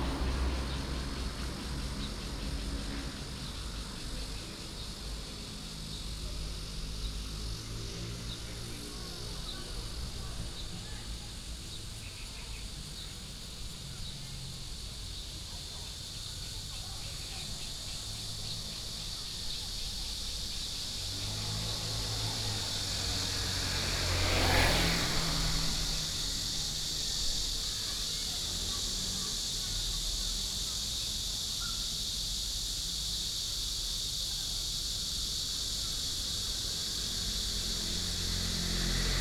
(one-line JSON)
{"title": "Xingfu St., Zhongli Dist. - Next to the park", "date": "2017-07-10 17:35:00", "description": "Cicadas, sound of birds, Traffic sound", "latitude": "24.95", "longitude": "121.24", "altitude": "140", "timezone": "Asia/Taipei"}